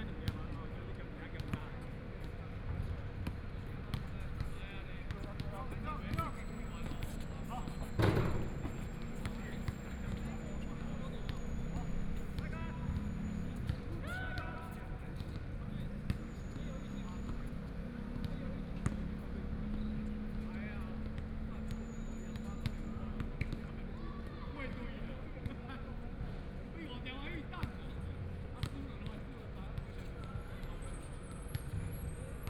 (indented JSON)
{
  "title": "Xihu Sports Park, Xihu Township - Night basketball court",
  "date": "2014-01-05 19:35:00",
  "description": "Night basketball court, Play basketball, Traffic Sound, Zoom H4n+ Soundman OKM II",
  "latitude": "23.96",
  "longitude": "120.48",
  "altitude": "19",
  "timezone": "Asia/Taipei"
}